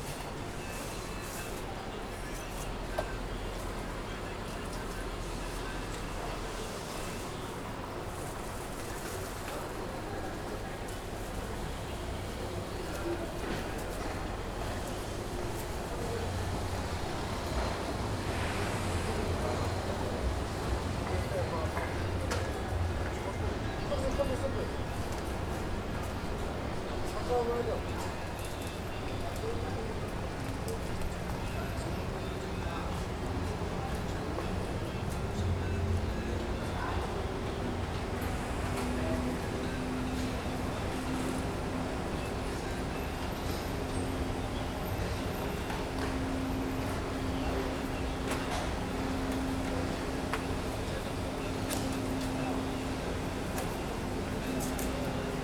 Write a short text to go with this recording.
This recording is one of a series of recording, mapping the changing soundscape around St Denis (Recorded with the on-board microphones of a Tascam DR-40).